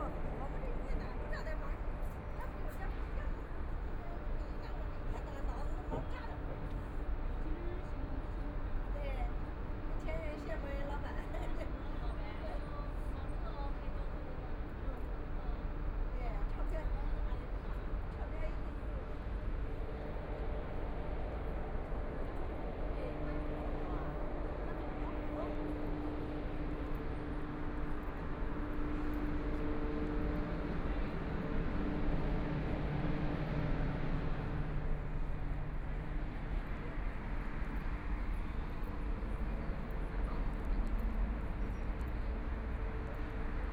Dongchang Road station, Shanghai - Walking in the subway station
Walking in the subway station, Subway station broadcast messages, Walking in underground passage, Binaural recording, Zoom H6+ Soundman OKM II
21 November 2013, 10:26